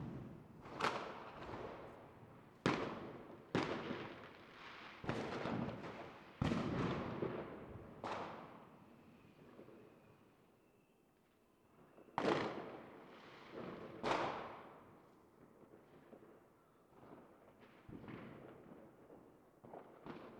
fireworks on new year's eve
the city, the country & me: january 1, 2016
Bad Freienwalde (Oder), Germany